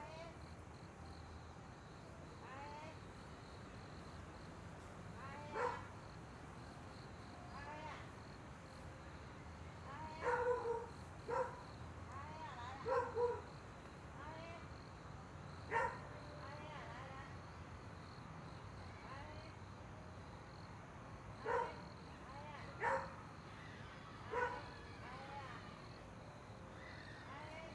Taipei EXPO Park, Taiwan - Dogs barking
Dogs barking, Traffic Sound
Please turn up the volume a little
Zoom H6